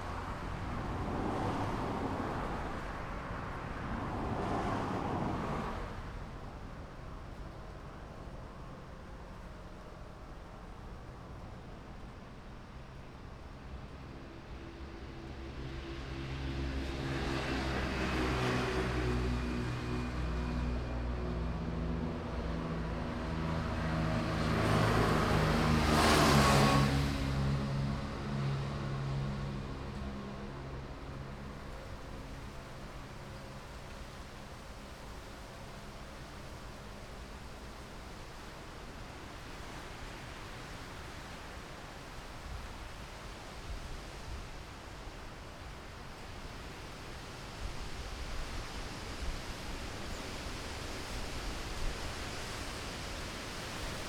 菜園溼地公園, Magong City - Wetland Park
In the Wetland Park, Traffic Sound, Birds singing, Forest
Zoom H6 +Rode NT4
23 October 2014, ~11:00